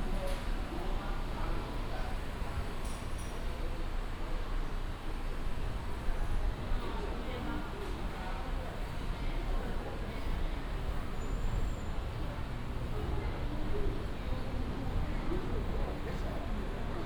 南門市場, Taipei City - Walking in the market
Walking in the market, traffic sound